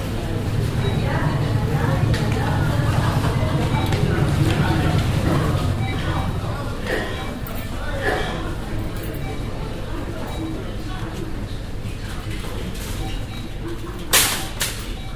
erkrath, einkaufszentrum, city center
lüftungsdröhnen, stimmen, schritte, durchsagen, morgens
project: social ambiences/ listen to the people - in & outdoor nearfield recordings